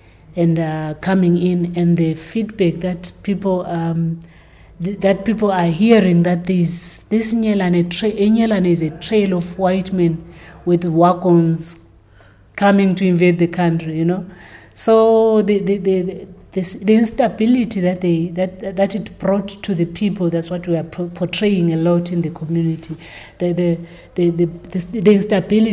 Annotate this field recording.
I had been witnessing Thembi training a group of young dancers upstairs for a while; now we are in Thembi’s office, and the light is fading quickly outside. Somewhere in the emptying building, you can still hear someone practicing, singing… while Thembi beautifully relates many of her experiences as a women artist. Here she describes to me her new production and especially the history it relates…